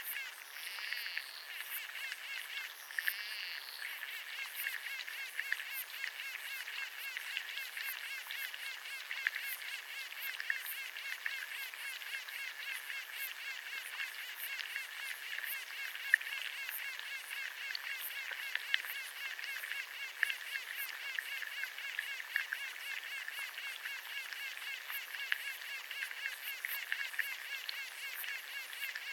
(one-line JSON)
{"title": "Noriūnai, Lithuania, river Levuo underwater", "date": "2019-08-04 14:30:00", "description": "hydrophones in the river. lots of bubbling from water plants and some water insects", "latitude": "55.80", "longitude": "24.88", "altitude": "74", "timezone": "Europe/Vilnius"}